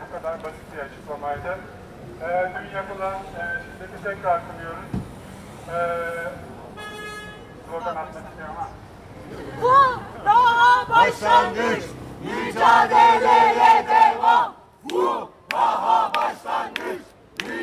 RIOT/Istanbul Feruza cafe
People are showing their indignation about the police brutality during the Gazi park event, several people are still into coma due to abuse of violence.
Beyoğlu/Istanbul Province, Turkey, 1 August 2013, 18:29